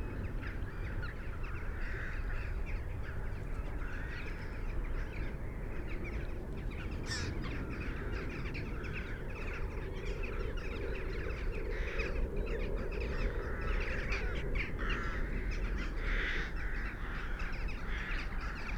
Budle Cottages, Bamburgh, UK - inlet soundscape ...
inlet soundscape ... small patch of sand visited by various flocks before they disperse along the coast ... bird calls from ... jackdaw ... crow ... rook ... black-headed gull ... common gull ... curlew ... dunlin ... oystercatcher ... wren ... parabolic ... background noise ...
United Kingdom